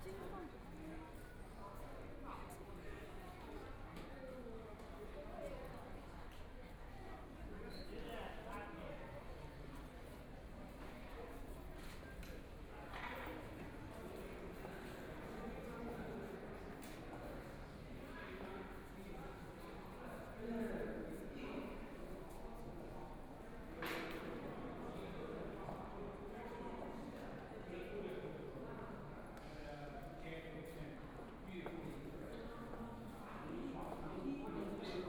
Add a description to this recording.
walking through tthe Temple, Binaural recording, Zoom H6+ Soundman OKM II